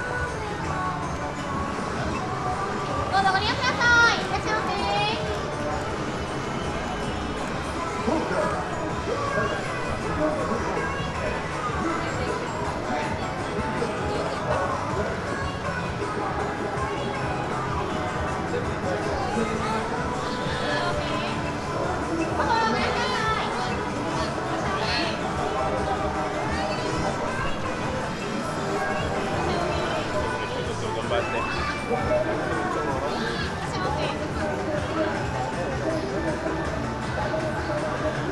street ambience with a girl calling and inviting costumers
Shibuya, Tokyo, Japan, November 2013